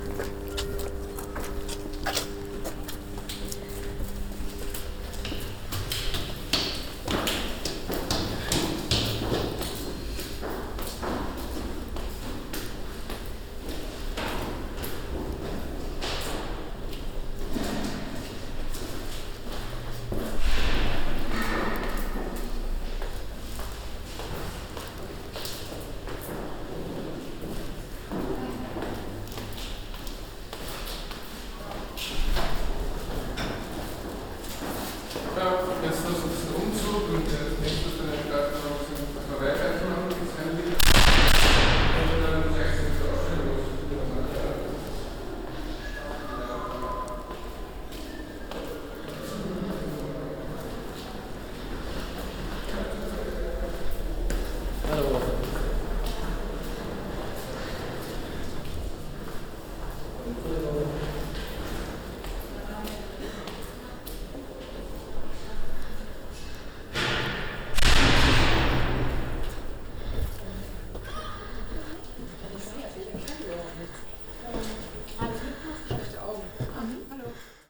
Frappant. Treppenhaus zur Schülerausstellung. 31.10.2009 - Große Bergstraße/Möbelhaus Moorfleet
Frappant Treppenhaus zur Ausstellung 11